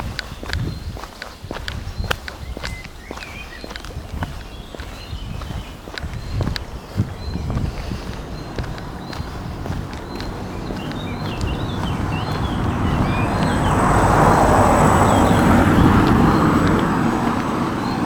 Walking through the public park
Metal railing and park life.
Registred with SONY IC RECORDER ICD-PX440